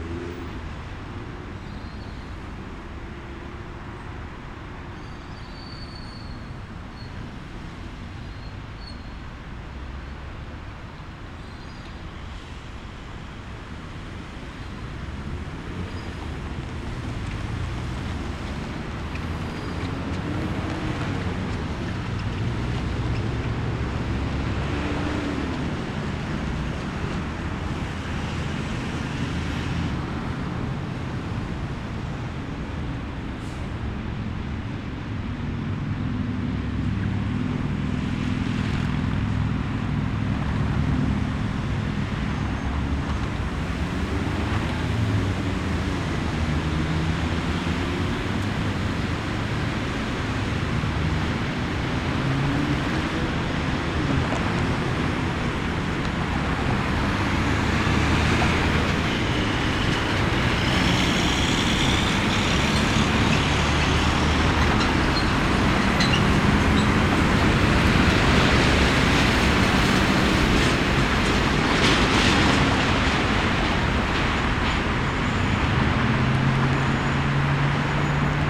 Corner of Alexandra Parade and Nicholson St, Carlton - Part 2 of peculiar places exhibition by Urban Initiatives; landscape architects and urban design consultants
landscape architecture, urban initiatives pty ltd, urban design, peculiar places
Carlton North VIC, Australia, 17 August 2010, 09:09